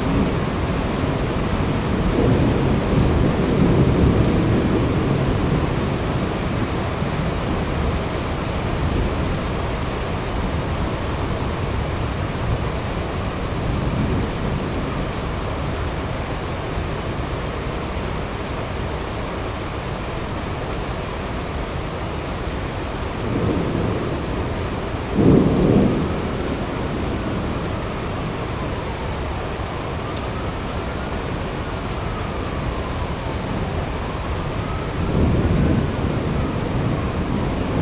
Ave, Ridgewood, NY, USA - Heavy rain with distant a thunderstorm
Heavy rain with a distant thunderstorm.
Zoom H6
New York, USA